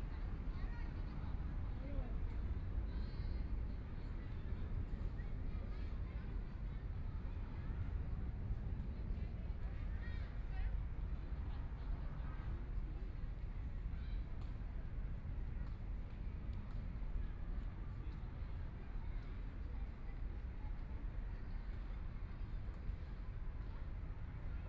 {
  "title": "MingShui Park, Taipei City - Sitting in the park",
  "date": "2014-02-16 17:52:00",
  "description": "Sitting in the park, Traffic Sound, Community-based park, Kids game sounds, Birds singing, Environmental noise generated by distant airport, Binaural recordings, Zoom H4n+ Soundman OKM II",
  "latitude": "25.08",
  "longitude": "121.55",
  "timezone": "Asia/Taipei"
}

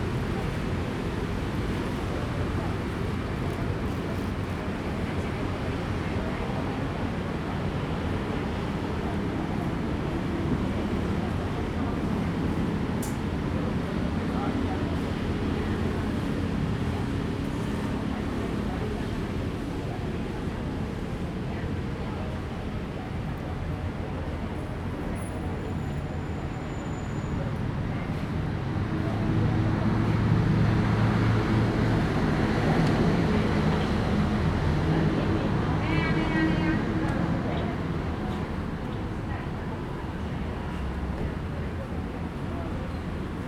{
  "title": "臺南公園, 台南市東區 - in the Park",
  "date": "2017-02-18 16:15:00",
  "description": "in the Park, Traffic sound, birds, The old man\nZoom H2n MS+XY",
  "latitude": "23.00",
  "longitude": "120.21",
  "altitude": "21",
  "timezone": "Asia/Taipei"
}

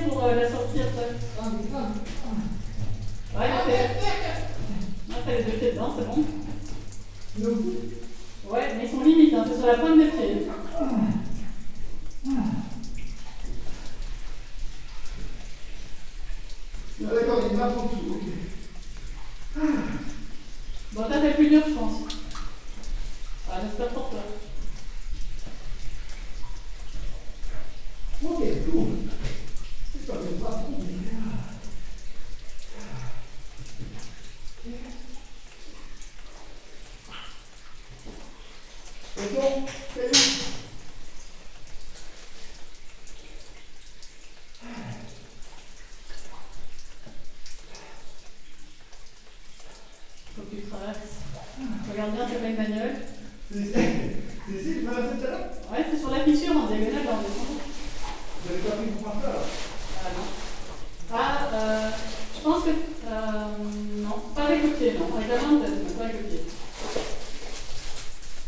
{
  "title": "Rimogne, France - Climbing a shaft",
  "date": "2018-02-11 10:55:00",
  "description": "Into the underground slate quarry, a friend is climbing a very inclined shaft. It's difficult to walk as everything is very sliding.",
  "latitude": "49.84",
  "longitude": "4.54",
  "altitude": "244",
  "timezone": "Europe/Paris"
}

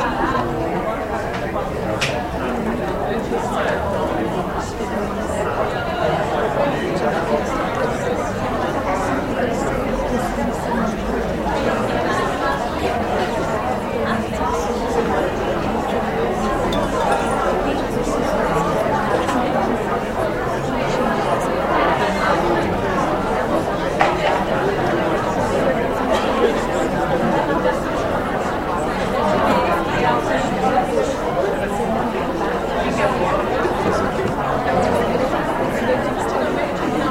{
  "title": "Brasília, DF, Brasil - 6º Encontro Arteduca",
  "date": "2012-07-26 09:00:00",
  "description": "Encontro acadêmico do Arteduca/UnB",
  "latitude": "-15.81",
  "longitude": "-47.90",
  "altitude": "1112",
  "timezone": "America/Sao_Paulo"
}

